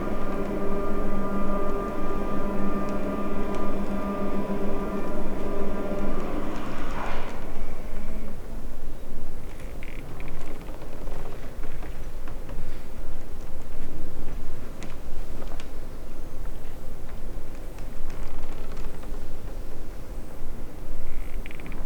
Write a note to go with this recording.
shotgun, the creaking is coming from the moving rotor, then the machine starts and stops